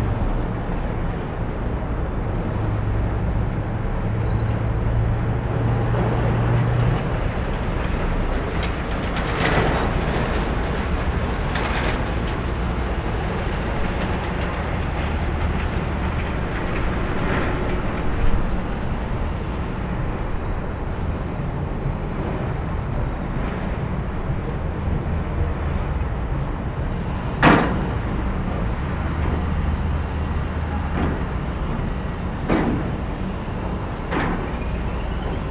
Tsentralnyy rayon, Woronesch, Oblast Woronesch, Russland - Ul. Shishkowa in the morning
recorded from a panel flat, 2nd floor, massive construcion going on opposite of streen. Olympus Recorder
Voronezh, Voronezh Oblast, Russia